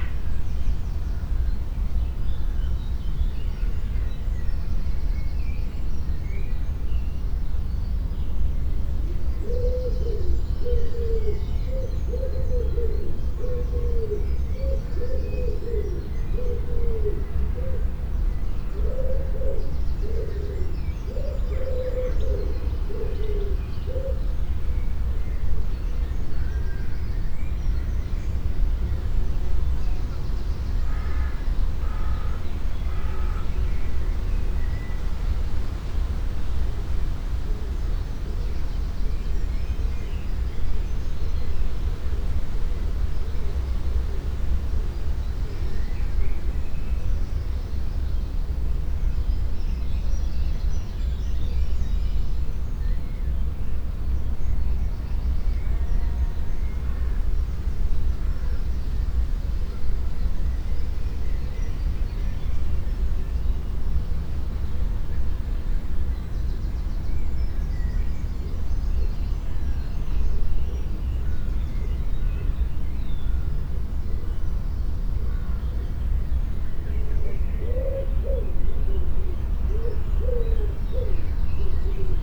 Malvern Wells, Worcestershire, UK - 5am
An experiment with longer recordings allowing the listener to engage more completely with the location.
MixPre 6 II with 2 x Sennheiser MKH 8020s.
England, United Kingdom